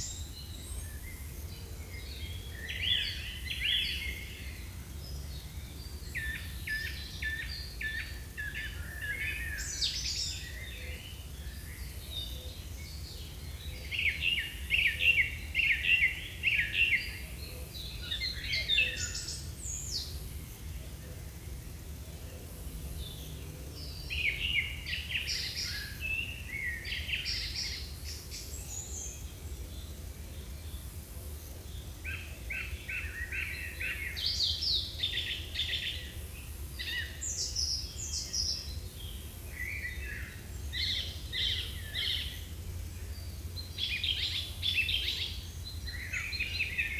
Brajani, Kastav, Blackird-forest
Blackbirds, cuckoo...
rec setup: X/Y Senhaiser mics via Marantz professional solid state recorder PMD660 @ 48000KHz, 16Bit
22 May 2003, 19:14